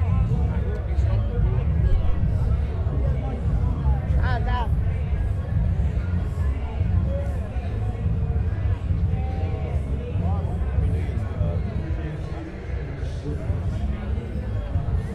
Miami Beach, FL, USA - The Sunken Hum Broadcast 1 - The People on South Beach, Miami, New Year's Eve
New Year's Eve on South Beach, Miami walking through the crowds. The music from one club mixes over with the beats from the next and the people speak in all different tounges.
This is the first of my year long, two minute, daily sound diary broadcasts - all unaltered and recorded on a Zoom H4.